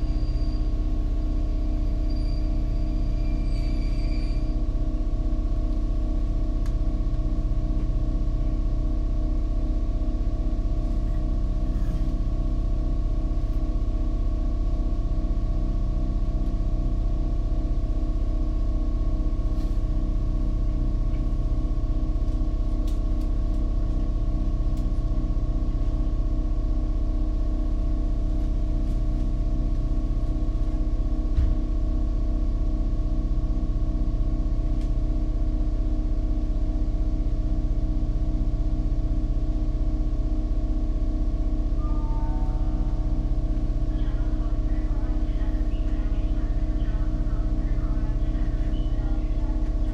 Train Waiting to leave Katowice Poland
21 February 2009, ~21:00